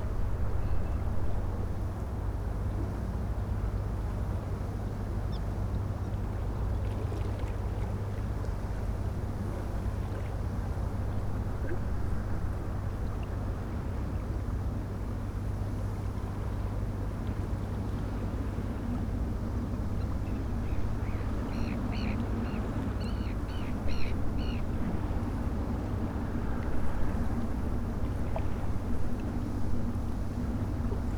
seagulls, traffic noise
the city, the country & me: july 6, 2011
Lelystad, The Netherlands, 6 July 2011, ~15:00